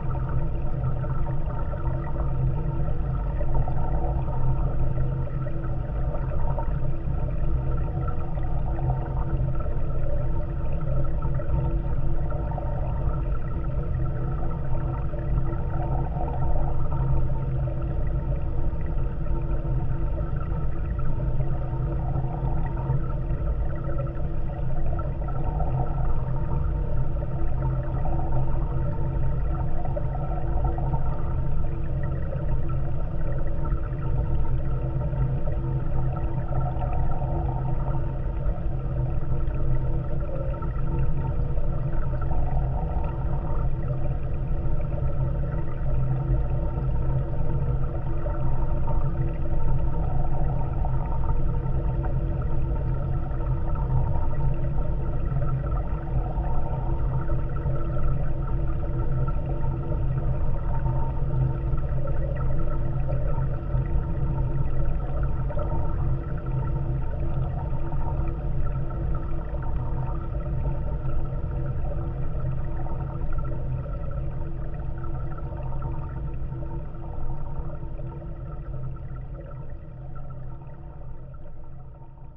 Mizarai, Lithuania, dam railing
Raings of small dam. Geophones contact recording
Alytaus apskritis, Lietuva, 8 September, 19:50